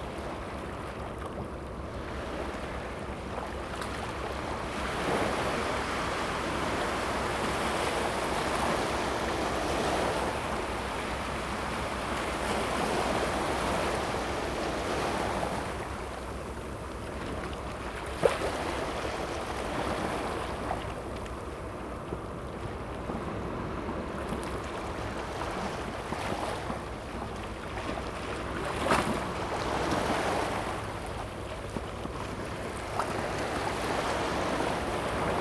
Siziwan, Gushan District, Kaohsiung - the waves
Sound of the waves, Beach
Zoom H2n MS+XY